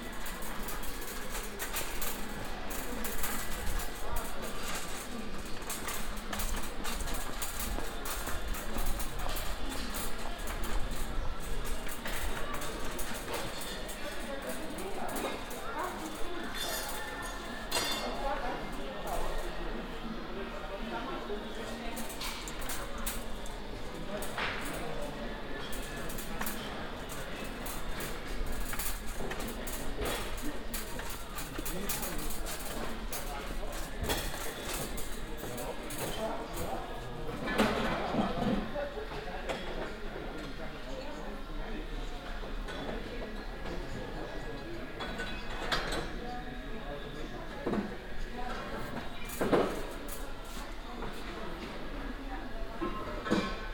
inside a new big store for handcraft tools and construction material
soundmap d - social ambiences and topographic field recordings